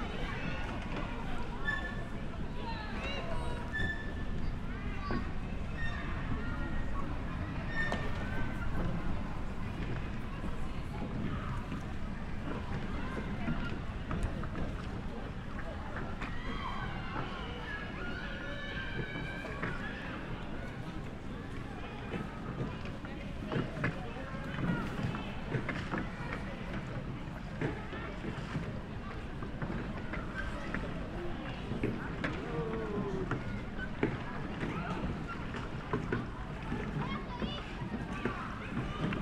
Lake with people sailling small wooden boats, Bom Jesus de Braga Sactuary. Recorded with SD mixpre6 and AT BP4025 XY stereo microphone.
8 September, 16:40